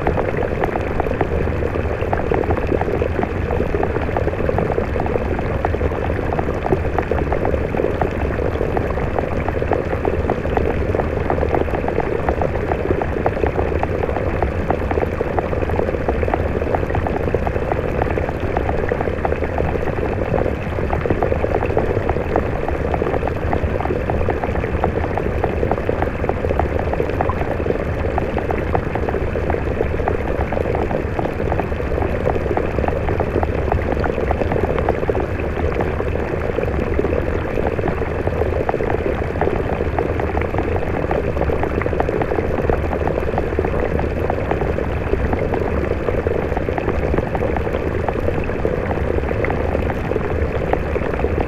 SBG, Gorg Negre - Torrent del Infern (hidro3)
Exploración con hidrófonos del torrente y la cascada.